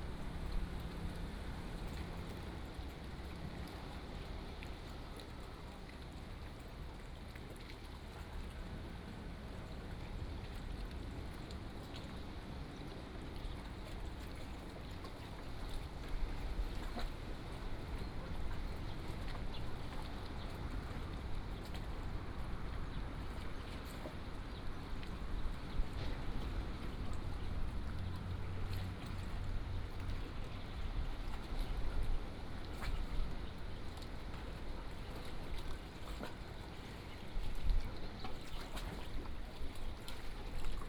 At the fishing port, Bird sound, Sound of the waves, tide, Dog barking